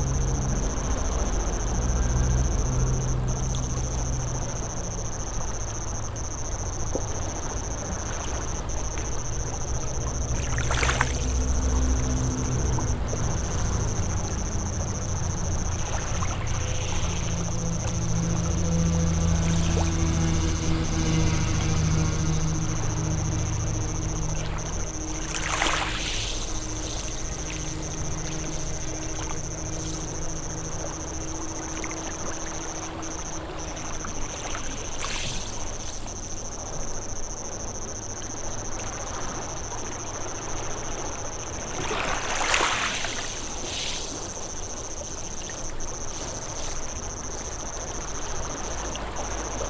Bd Stephanopoli de Comene, Ajaccio, France - les Sanguinaires Plage Corse
Wave Sound
Captation : ZOOM H6